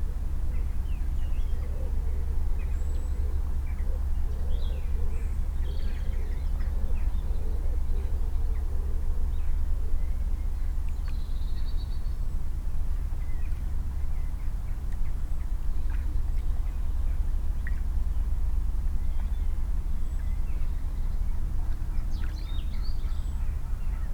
Passing Boat and Geese on the River Severn, Upton, Worcestershire, UK - Boat
A Narrowboat passes a small beach uncovered by the river. The boat's wash laps the sand then rebounds from the opposite bank. At the end two geese fly overhead together. The mics and recorder are in a rucksack suspended from an umbrella stuck in the sand.
MixPre 3 with 2 x Beyer Lavaliers.